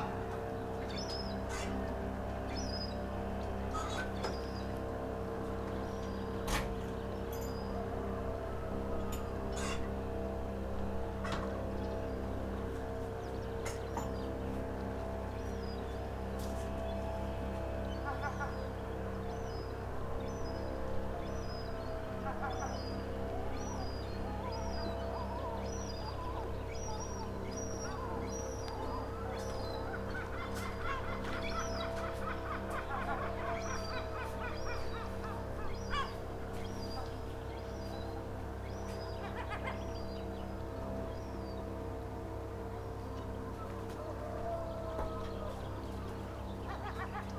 window, Novigrad, Croatia - at dawn, window, poems
here borders between out- and inside are fluid ... who listens to whom?